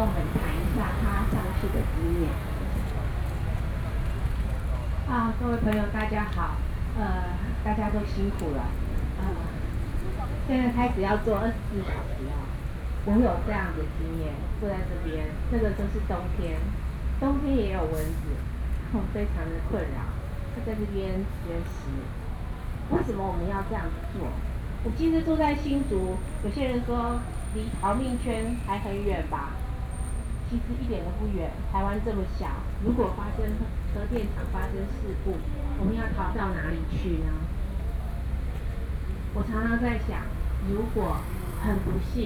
Legislative Yuan, taipei - sit-in protest
the event to stage 24-hour hunger strike, against nuclear power, Sony PCM D50 + Soundman OKM II
19 May 2013, ~21:00